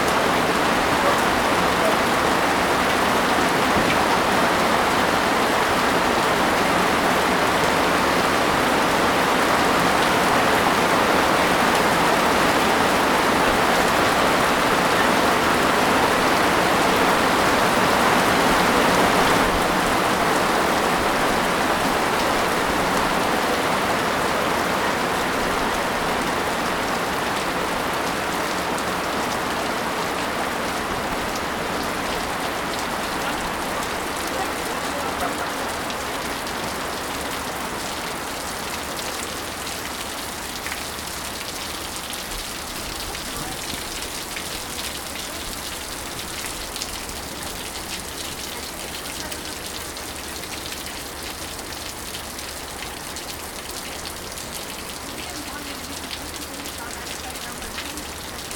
Siegburg, Deutschland - Starkregenschauer / Heavy rain
Starker Regenschauer in Siegburg auf dem Markt unter der Markise eines Cafés.
Heavy rain in Siegburg on the market under the awning of a café.